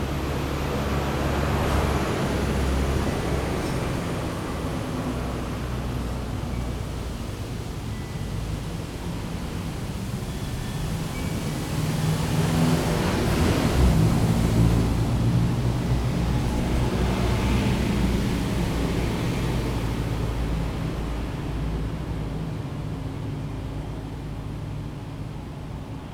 windy night at a terrace, Neuquén, Argentina
neuquén, wind, terrace, airplane, cars
20 January 2012, 22:00